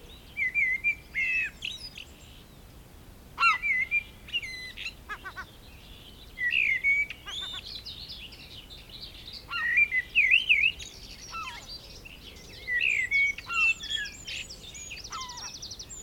{
  "title": "Castle Hill - Dawn Chorus",
  "date": "2020-05-06 15:35:00",
  "description": "Recorded at the Castle Hill Nature reserve, just as the first UK Covid restrictions were being eased.\nLOM MikroUSI, Sony PCM-A10",
  "latitude": "50.85",
  "longitude": "-0.06",
  "altitude": "144",
  "timezone": "Europe/London"
}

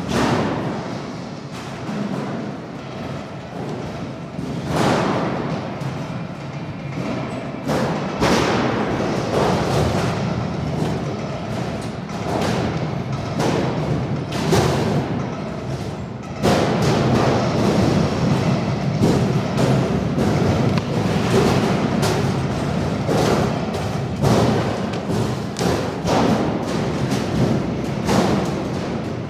{
  "title": "metal structure at AEG, Muggenhofer Str., Nürnberg/Muggenhof",
  "date": "2011-04-14 15:05:00",
  "latitude": "49.46",
  "longitude": "11.03",
  "altitude": "305",
  "timezone": "Europe/Berlin"
}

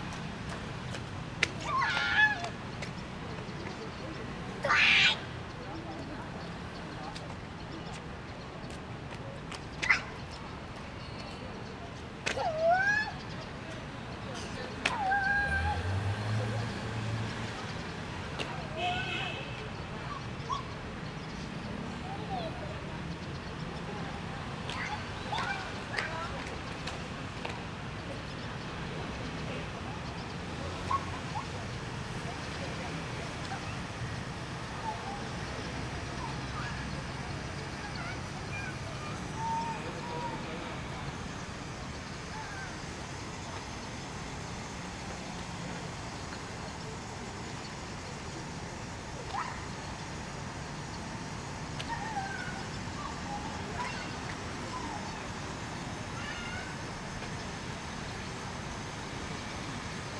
{
  "title": "Taiwan, Hsinchu City, East District, 中央路112巷23號 - Hopping Boy",
  "date": "2019-08-13 17:46:00",
  "description": "A boy hops around in the courtyard behind the Hsinchu City Art Gallery and the tax bureau building. Also sounds of early evening traffic. Stereo mics (Audiotalaia-Primo ECM 172), recorded via Olympus LS-10.",
  "latitude": "24.81",
  "longitude": "120.97",
  "altitude": "30",
  "timezone": "Asia/Taipei"
}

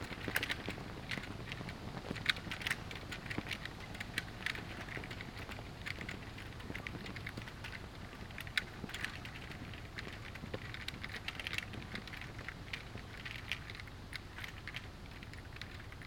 {
  "title": "Eckernförder Str., Kiel, Deutschland - Flags in the wind",
  "date": "2017-09-13 01:10:00",
  "description": "Flags on poles in the wind at night on a street, distant humming noise of traffic. Binaural recording, Zoom F4 recorder, Soundman OKM II Klassik microphone",
  "latitude": "54.35",
  "longitude": "10.09",
  "altitude": "20",
  "timezone": "Europe/Berlin"
}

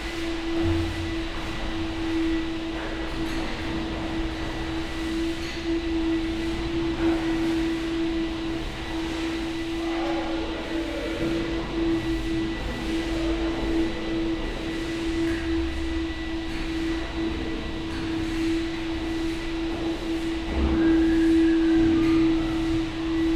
{
  "title": "doors, Karl Liebknecht Straße, Berlin, Germany - door crevice, vacuum cleaner, doors",
  "date": "2015-09-07 10:12:00",
  "description": "monday morning, inside and outside merge\nSonopoetic paths Berlin",
  "latitude": "52.52",
  "longitude": "13.41",
  "altitude": "47",
  "timezone": "Europe/Berlin"
}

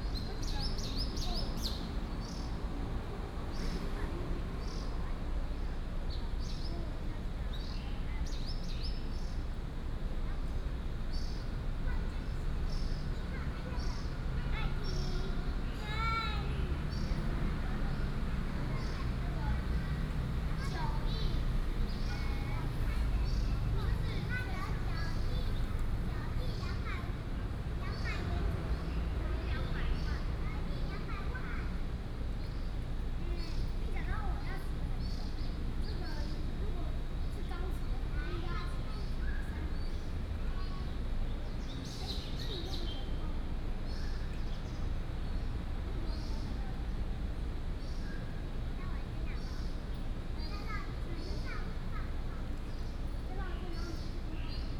{
  "title": "龍生公園, Da'an District - Birds and the Park",
  "date": "2015-06-28 18:17:00",
  "description": "In the park, children, Bird calls, Very hot weather",
  "latitude": "25.03",
  "longitude": "121.54",
  "altitude": "19",
  "timezone": "Asia/Taipei"
}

